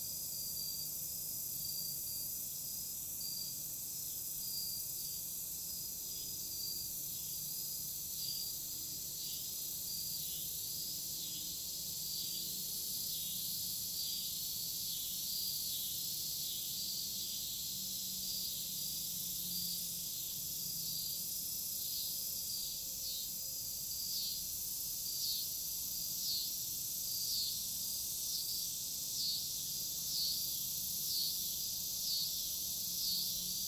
Stinging Nettle Trail, Ballwin, Missouri, USA - Stinging Nettle End
Out looking for pawpaws and made this recording from a bench at the end of the Stinging Nettle Trail before trail is washed out by the Meramec River to the east.
Missouri, United States, 9 September